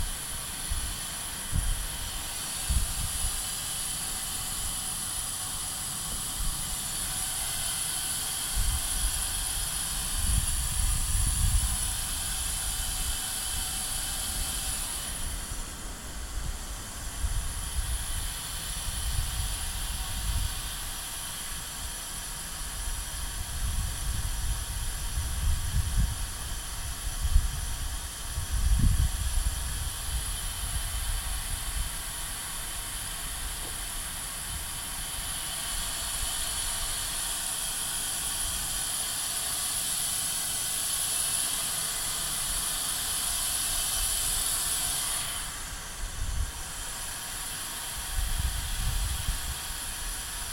Hissing with some wind. Zoom H1. Binckhorst project page.
The Hague Center, The Netherlands, 24 March 2013